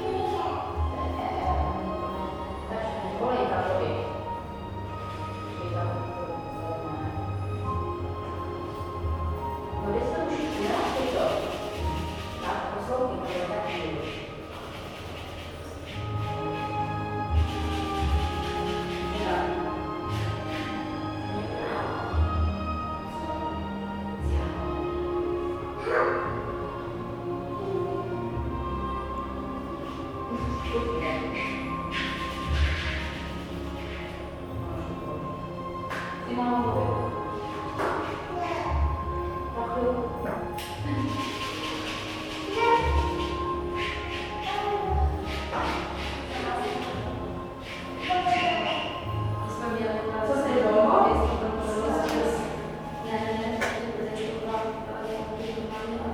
Atmospheric Cultural Centre
Wandering in the corridors of the centre the sounds of dancing and children facepainting come from behind doors and round corners. The piano is in a darkened wood panelled concert hall empty except for us.
16 June 2008